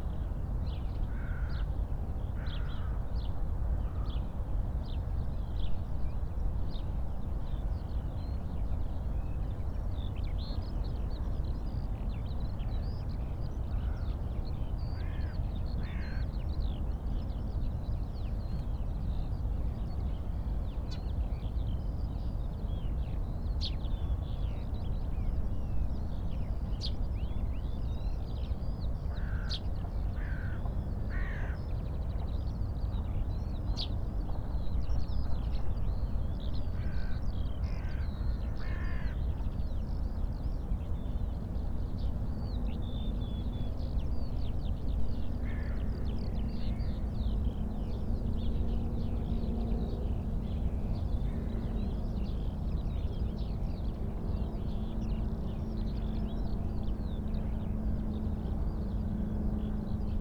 Tempelhofer Feld, Berlin - spring morning ambience
spring morning ambience on Tempelhofer Feld, old airport area. Many Eurasian skylarks (Alauda arvensis) in the air, and distant rush hour noise from the Autobahn A100
(SD702, S502 ORTF)